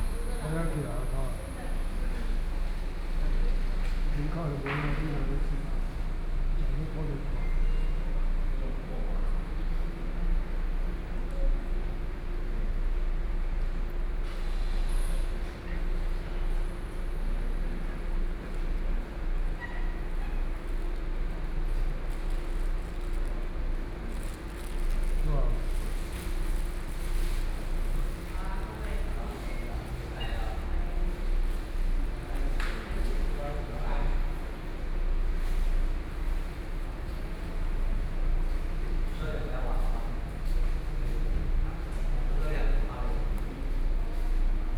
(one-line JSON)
{"title": "Chihshang Station, Chihshang Township - In the station lobby", "date": "2014-09-07 13:39:00", "description": "In the station lobby", "latitude": "23.13", "longitude": "121.22", "altitude": "271", "timezone": "Asia/Taipei"}